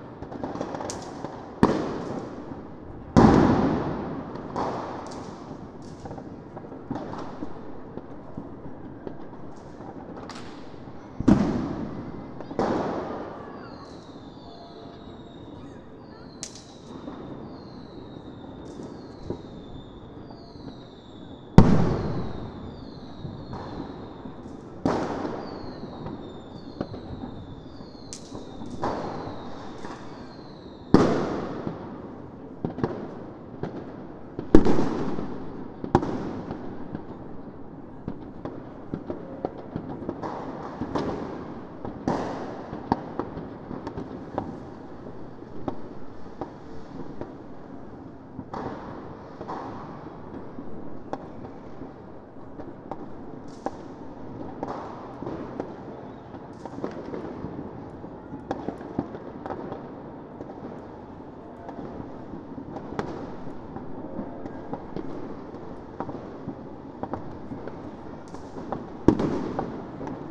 This year it was more firecrackers and bangers instead of fireworks rockets.